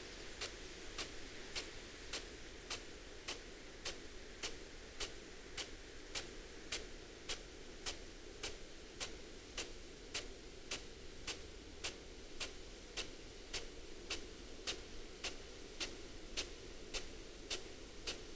Malton, UK - irrigation sprinkler ...
irrigation sprinkler on potato crop ... dpa 4060s in parabolic to mixpre3 ... bird calls ... song ... from ... wren ... yellowhammer ... blackbird ... linnet ... corn bunting ... tings and bangs from the big cylinder of rolled water pipe ... just fascinated by these machines and the effects they produce ...